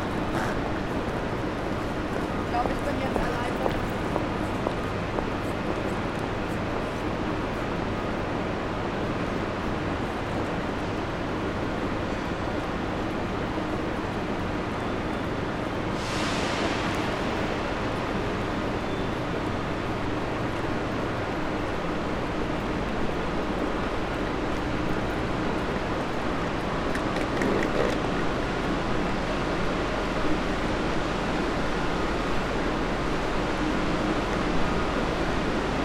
Frankfurt (Main) Hauptbahnhof, Gleiszugang - Gleizugang
This recording, second in the series of recordings during the 'Corona Crisis', starts with a coughing that became a new meaning. The microphone walks into the great hall and rests close to the platform 8. Again there is rather nothing audible which is at that spot remarkable. It is friday at noon, normally the hall is full of people that are hurrying from one platform to another. Here sometimes you hear people running, but not very many...